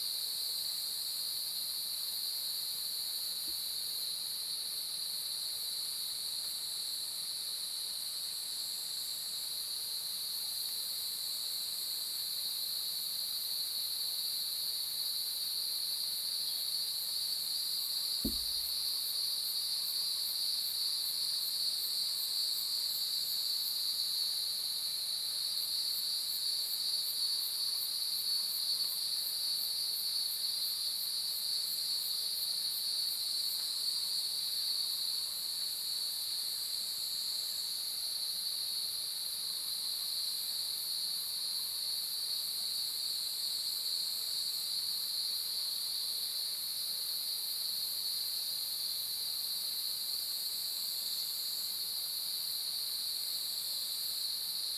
魚池鄉五城村, Taiwan - At the edge of the woods
early morning, Cicadas sound, At the edge of the woods
Zoom H2n Spatial audio
Yuchi Township, 華龍巷43號, 14 July 2016